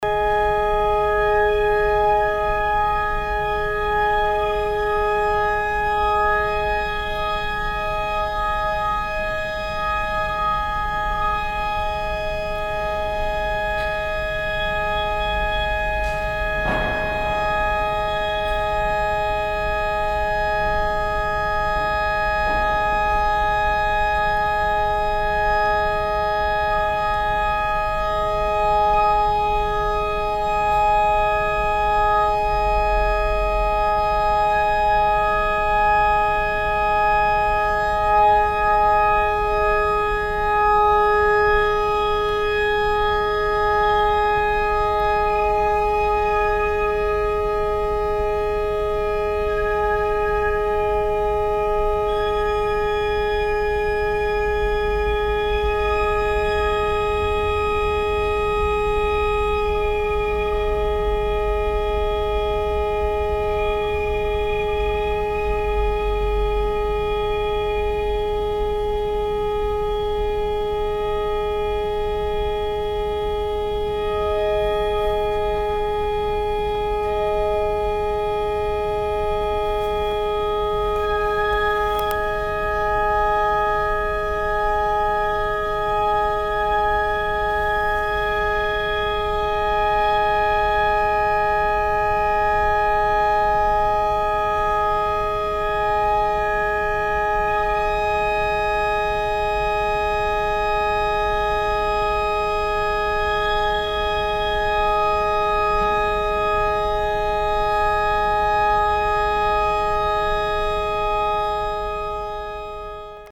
inside the church main hall - the tuning of the organ - part 01
soundmap nrw - social ambiences and topographic field recordings
reinoldi kirche, ostenhellweg, 9 May, ~12:00